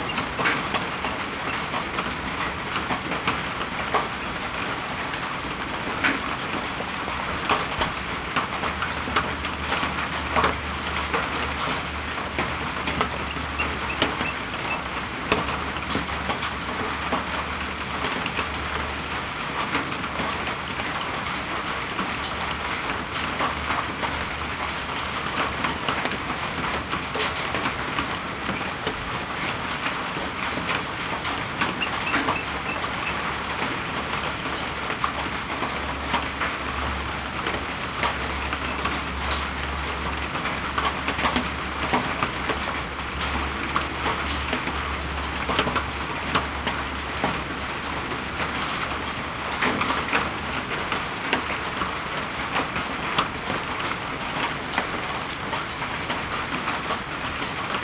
Blackland, Austin, TX, USA - Rain After the Eclipse 2
Recorded with a Pair of DPA4060s and a Marantz PMD661